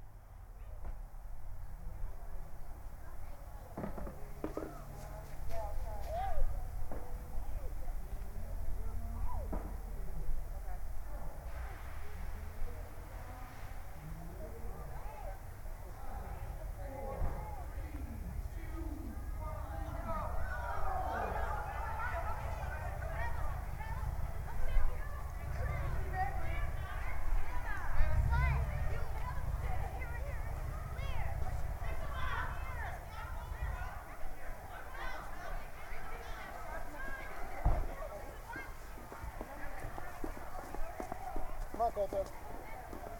United Methodist Church, Main St., Bear Lake, MI - Easter Egg Hunt in the Snow
After a moment's wait, about 60 children and their parents hunt for plastic eggs on the Saturday before Easter Sunday. Several inches of snow remain on the ground, after a big snowstorm a few days earlier. Music and a costumed Easter Bunny are part of the festivities. Stereo mic (Audio-Technica, AT-822), recorded via Sony MD (MZ-NF810, pre-amp) and Tascam DR-60DmkII.
Bear Lake, MI, USA, 26 March 2016